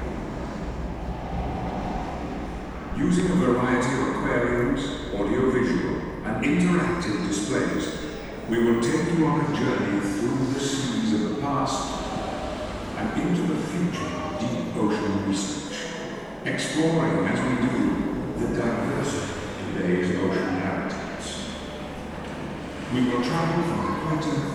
Hull, UK - The Deep ...
The Deep ... Hull ... introduction in the main hall ... open lavalier mics clipped to baseball cap ... all sort of noise ...